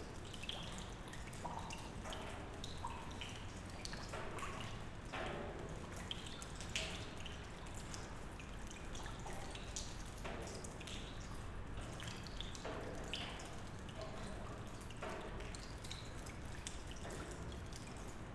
Veterinario, Punto Franco Nord, Trieste, Italy - drops on barrels
former stables building and veterinary, Punto Franco Nord, Trieste. drops falling from the ceiling into a feeder and on empty barrels.
(SD702, AT BP4025)
11 September 2013, ~16:00